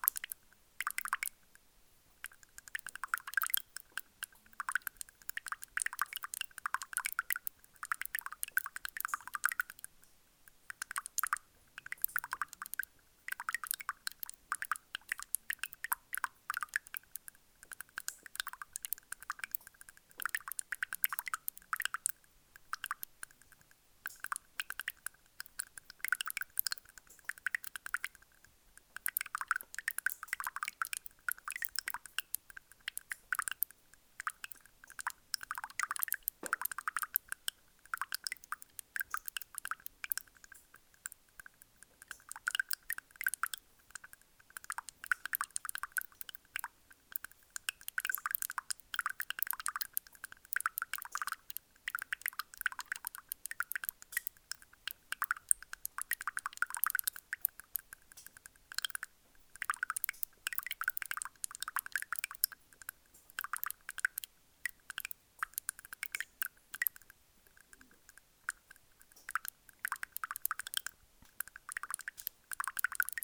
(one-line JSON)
{"title": "Privas, France - Small stream", "date": "2016-04-24 06:40:00", "description": "In an underground iron mine, a small stream makes strange noises inside the gravels.", "latitude": "44.73", "longitude": "4.58", "altitude": "292", "timezone": "Europe/Paris"}